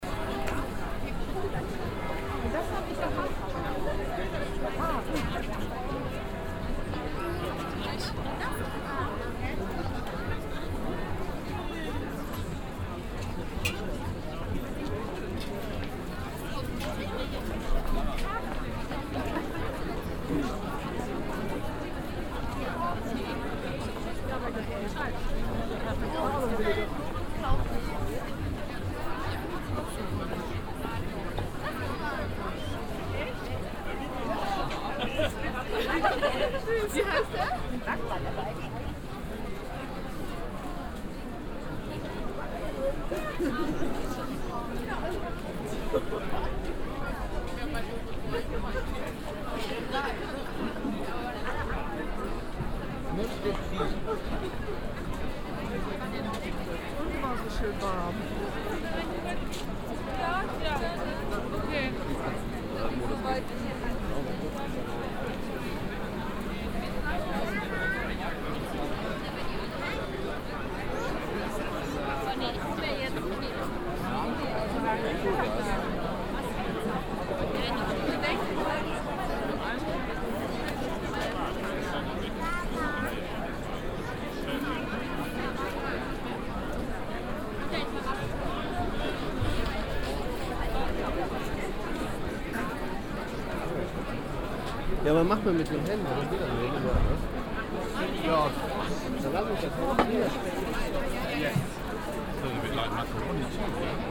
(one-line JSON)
{"title": "cologne, domplatte, weihnachtsmarkt", "date": "2008-12-23 17:25:00", "description": "weihnachtsmarkt ambience auf der domplatte abends.drehorgelklänge und kirchglocken im regen, fröhlichen treiben zahlreicher glühweinkonsumenten zwischen diversen fress- und accessoirständen\nsoundmap nrw - weihnachts special - der ganz normale wahnsinn\nsocial ambiences/ listen to the people - in & outdoor nearfield recordings", "latitude": "50.94", "longitude": "6.96", "altitude": "62", "timezone": "Europe/Berlin"}